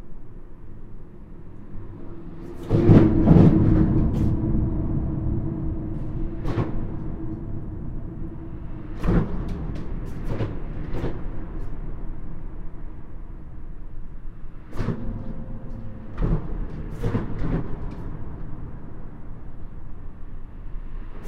Hayange, France - Inside the bridge
Inside the concrete viaduct overlooking the Hayange town. Traffic-related shocks are very violent. This is the expansion joint of the bridge.
9 February 2019